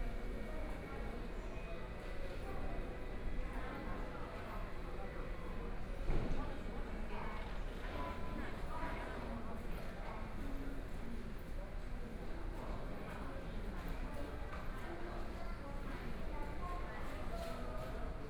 Kaohsiung International Airport, Taiwan - At the airport hall
At the airport hall
14 May 2014, ~09:00